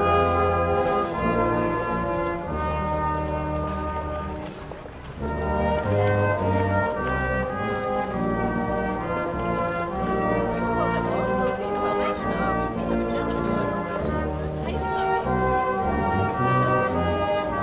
København K, København, Danemark - Orchestra
Danish orchestra in the street, Zoom H6